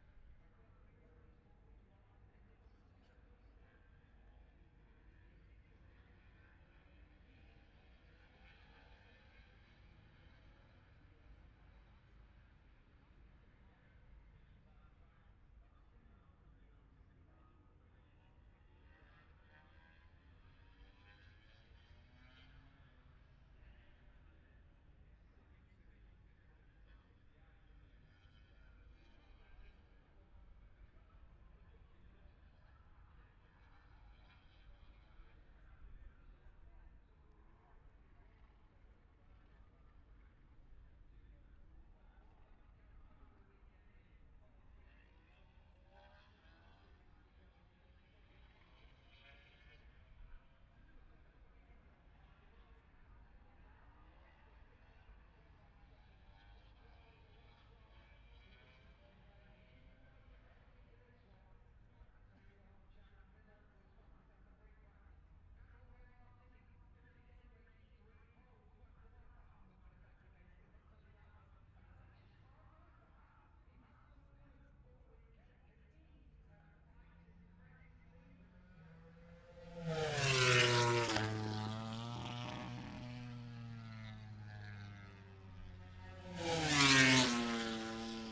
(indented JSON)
{
  "title": "Silverstone Circuit, Towcester, UK - british motorcycle grand prix 2021 ... moto grand prix ...",
  "date": "2021-08-28 14:10:00",
  "description": "moto grand prix qualifying two ... wellington straight ... dpa 4060s to Zoom H5 ...",
  "latitude": "52.08",
  "longitude": "-1.02",
  "altitude": "157",
  "timezone": "Europe/London"
}